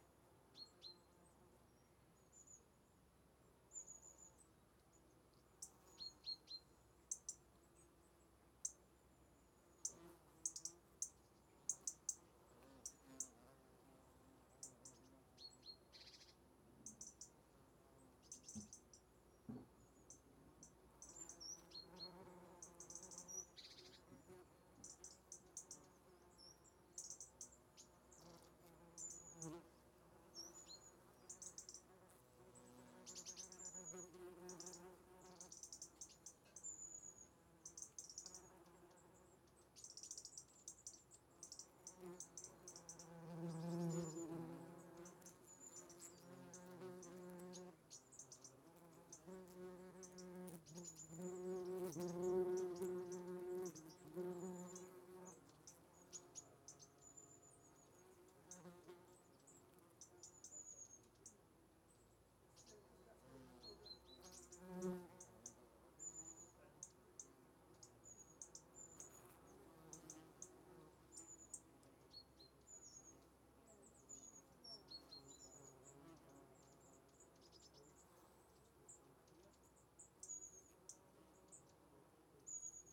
Gresham House, Calstock Rd, Gunnislake, UK - Wasps and Birds in an Apple Tree
In the garden of a 19th century mine captain's home in the Tamar Valley, there is an apple tree. I placed a ZOOM Q2HD microphone face-up underneath the tree.